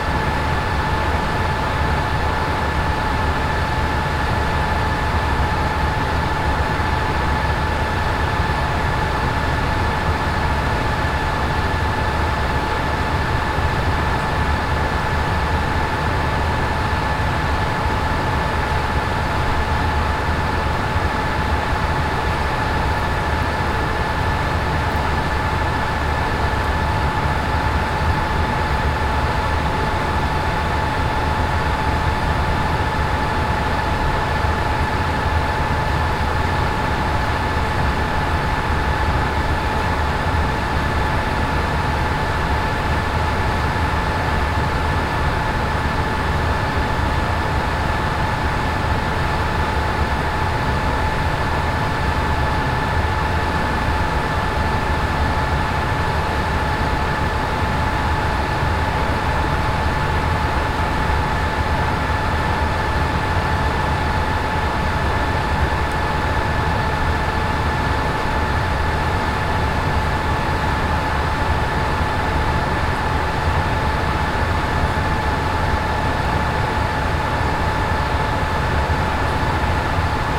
Moulins, Allee des soupirs, WWTP by night 2
France, Auvergne, WWTP, night, binaural